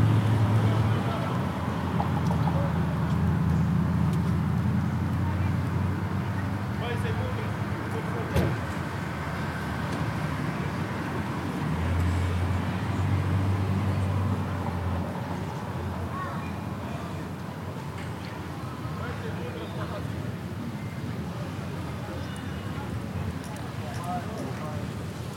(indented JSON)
{
  "title": "Nida, Lithuania - In Front of the Administration",
  "date": "2016-07-26 17:29:00",
  "description": "Recordist: Anita Černá\nDescription: In front of the Municipal Administration building. People talking, cars and bikes passing by. Recorded with ZOOM H2N Handy Recorder.",
  "latitude": "55.30",
  "longitude": "21.01",
  "altitude": "2",
  "timezone": "Europe/Vilnius"
}